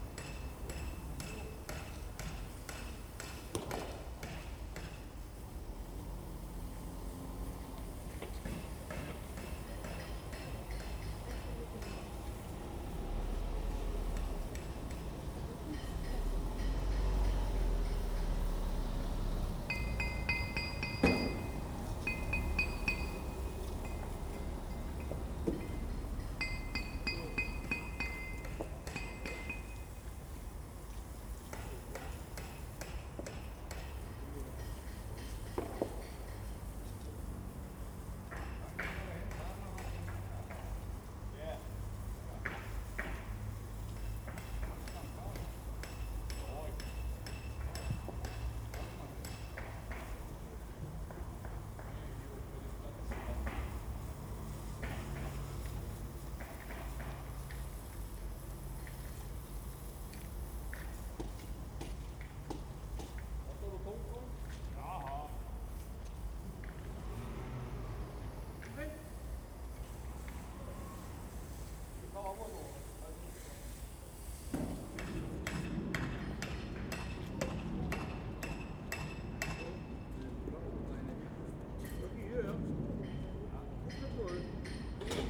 Nördliche Innenstadt, Potsdam, Germany - Re-laying the stones in the Old Market
Soundscape in the late afternoon as stones are cut and knocked into place within the rather intricate patterns designed for paving the square. This whole central area of Potsdam is being restored back to it's former 18th century glory after the impact of the DDR. Some gains but certainly losses too as some impressively brutalist communist architecture is demolished.
24 October 2016